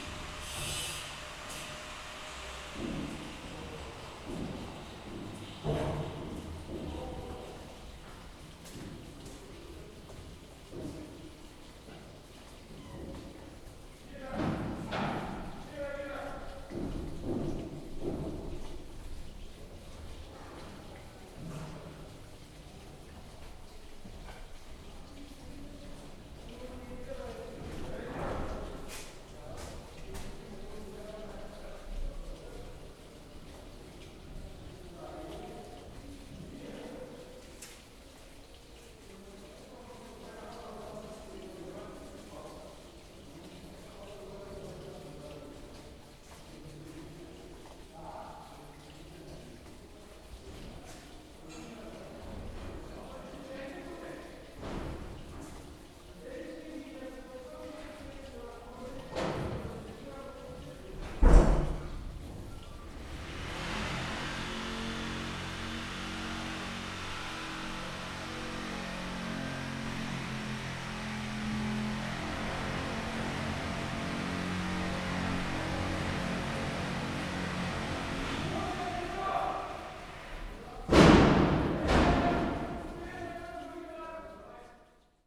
Utena, Lithuania, in a cellar of cultural center
in a cellar of cultural center, rain ouside and builders above...